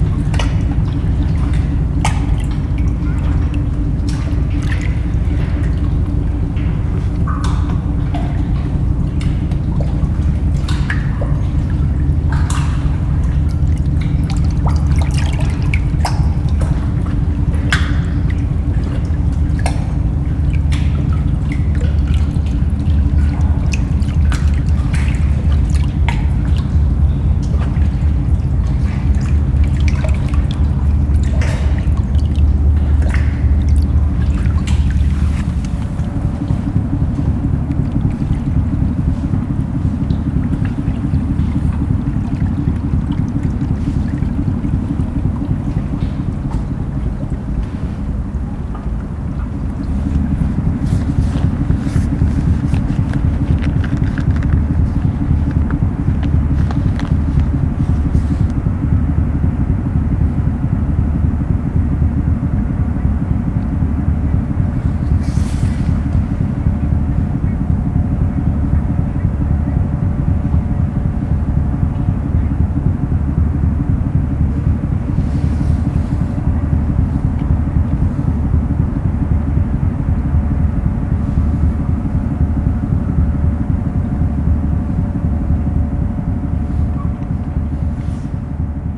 Gamle Oslo, Norway - On the pier, close to the opera.
Walking on the pier close to the Oslo opera recording. The ferry going to Denmark on the other side of the harbour. Recording in a water dripping cave underneath the pier.
Recorded with a Zoom H4n.
30 August 2011, 13:00